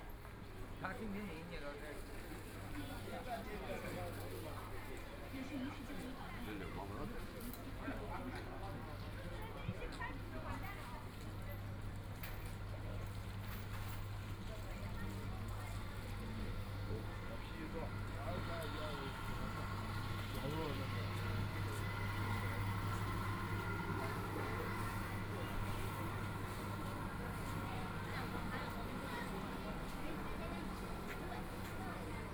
{
  "title": "LU Hong Road, Shanghai - soundwalk",
  "date": "2013-11-29 16:32:00",
  "description": "Evening Market, The sound of the street under construction, Walking in the narrow old residential shuttle, Binaural recording, Zoom H6+ Soundman OKM II",
  "latitude": "31.23",
  "longitude": "121.48",
  "altitude": "13",
  "timezone": "Asia/Shanghai"
}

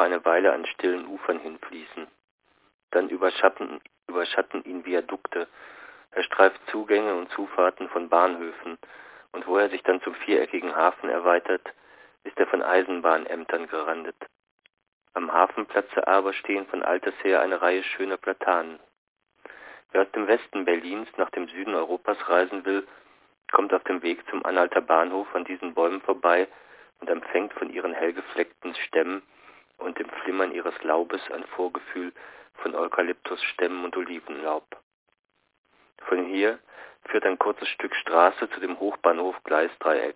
{
  "title": "Der Landwehrkanal (5) - Der Landwehrkanal (1929) - Franz Hessel",
  "latitude": "52.50",
  "longitude": "13.38",
  "altitude": "38",
  "timezone": "GMT+1"
}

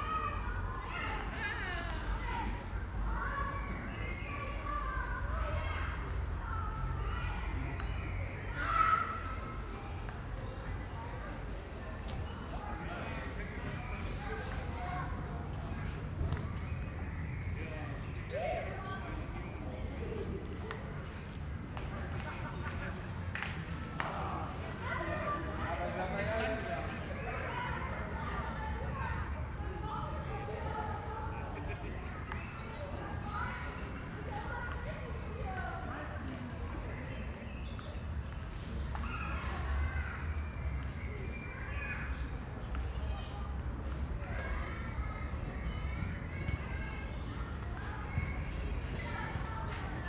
{
  "title": "berlin, wildenbruchplatz",
  "date": "2011-06-26 18:15:00",
  "description": "sunday early summer evening, ambience",
  "latitude": "52.48",
  "longitude": "13.45",
  "timezone": "Europe/Berlin"
}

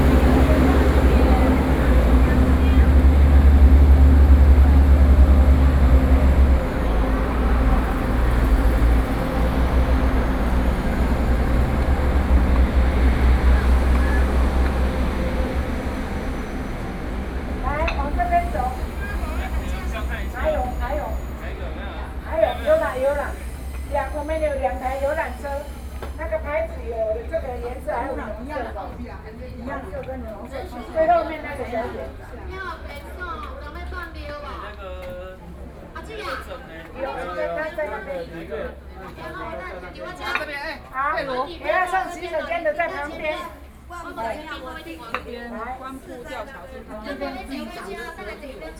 {
  "title": "十分車站, New Taipei City - Train station",
  "date": "2012-11-13 13:43:00",
  "latitude": "25.04",
  "longitude": "121.78",
  "altitude": "182",
  "timezone": "Asia/Taipei"
}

